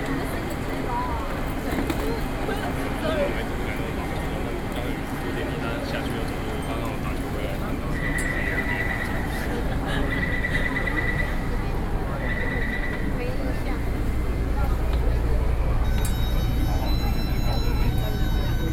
Taipei, Taiwan - waiting for the train
Zhongzheng District, Taipei City, Taiwan